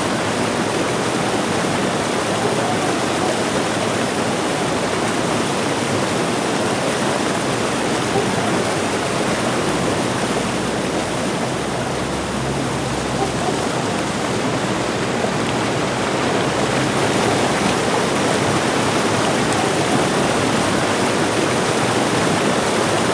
On a small dam. The sound of the water rushing down the dam wall as a small water fall. In the background a group of ducks. A dog barking in the more far distance and some cars passing by on the riverside roads.
Vianden, kleiner Damm
Auf einem kleinen Damm. Das Geräusch des Wassers, wie es die Kante wie ein kleiner Wasserfall hinabrauscht. Im Hintergrund eine Gruppe Enten. Ein Hund bellt in etwas weiterer Entfernung und einige Autos fahren auf den Uferstraßen vorbei.
Vianden, petit barrage
Sur un petit barrage. Le bruit de l’eau qui traverse le mur du barrage en formant une petite chute d’eau. Dans le fond, on entend un groupe de canards. Plus loin, on entend un chien aboyer et des voitures roulant sur les routes qui longent la rivière.
Vianden, Luxembourg, 18 September 2011